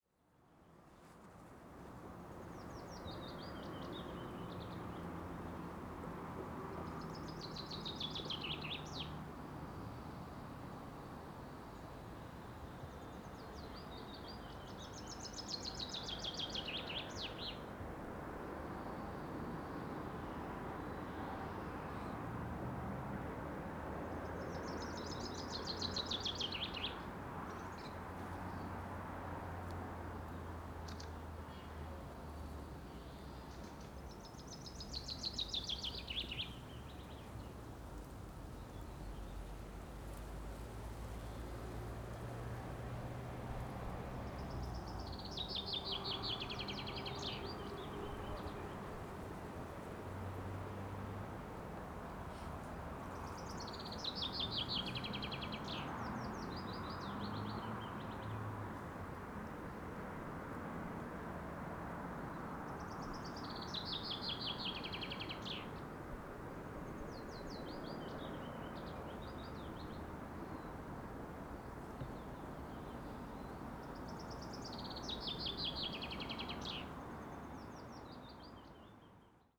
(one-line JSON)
{"title": "The Oredezh river, Siversky, Leningrad oblast, RU - birds singing by the river bank", "date": "2020-05-11 15:20:00", "description": "The Oredezh river, just sunny day\nZoom H1n", "latitude": "59.35", "longitude": "30.07", "altitude": "91", "timezone": "Europe/Moscow"}